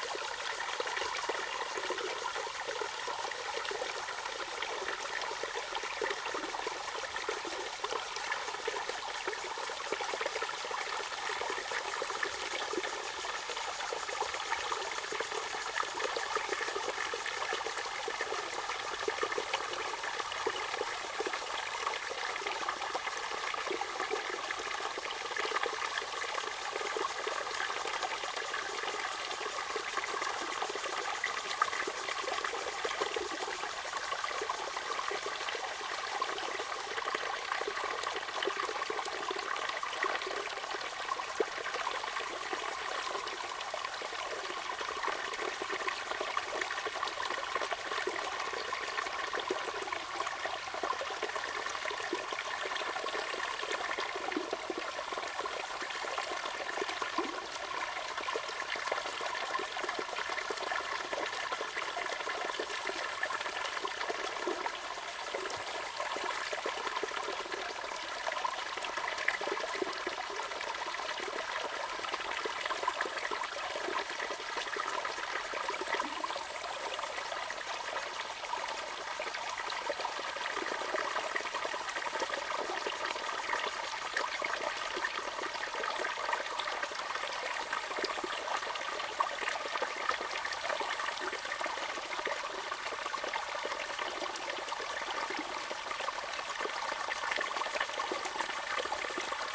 成功里, Puli Township, Nantou County - stream
Small streams, In the middle of a small stream
Zoom H2n MS+ XY+Spatial audio